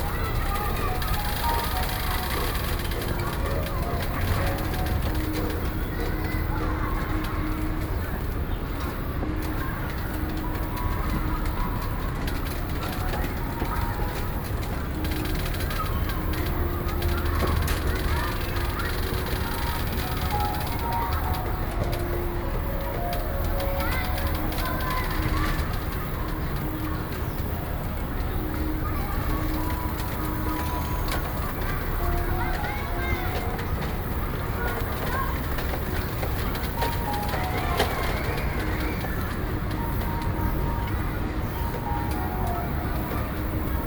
New Taipei City - Sound waving bamboo
Sound waving bamboo, In the Park, Distant sound of school, Zoom H4n+ Soundman OKM II